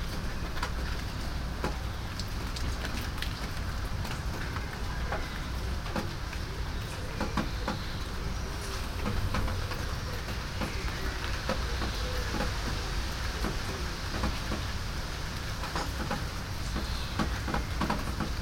tilburg, cloister garden, rain
international soundmap : social ambiences/ listen to the people in & outdoor topographic field recordings